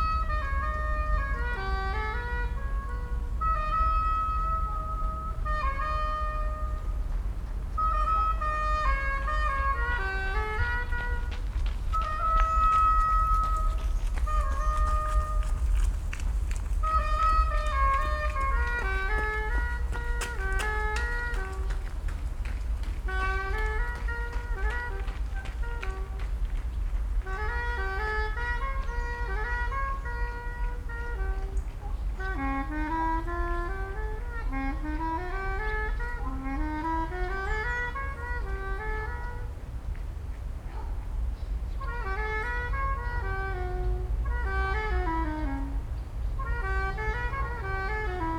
Volkspark Hasenheide, Berlin, Deutschland - musician practising, ambience
Volkspark Hasenheide, Berlin, Deutschland, musician practising on a saxophone, Saturday morning ambience with joggers
(Sony PCM D50, DPA4060)